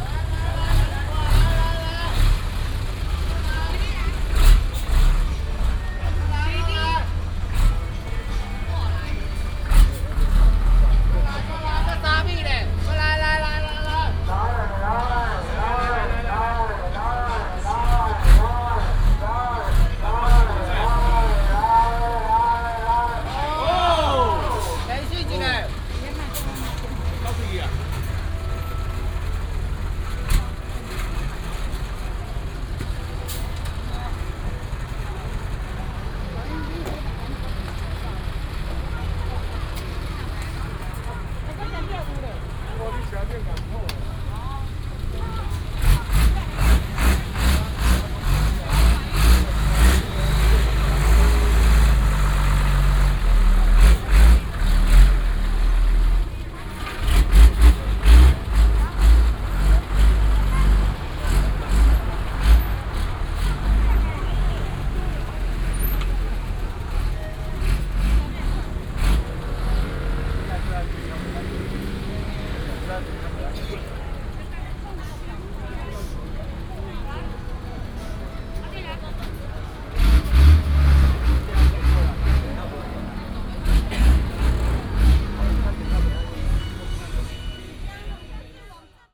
The truck is reversing ready to turn